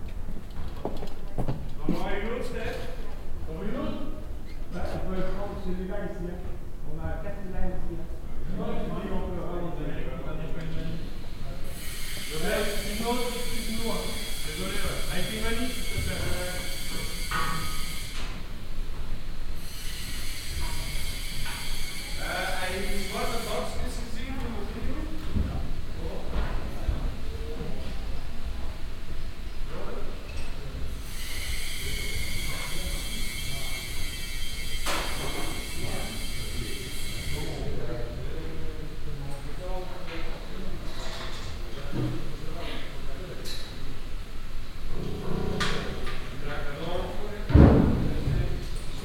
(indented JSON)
{
  "title": "paris, la ferme du buisson, big stage",
  "description": "piano tuning on the big stage of the la ferme du buisson\ninternational cityscapes - social ambiences and topographic field recordings",
  "latitude": "48.84",
  "longitude": "2.62",
  "altitude": "90",
  "timezone": "Europe/Berlin"
}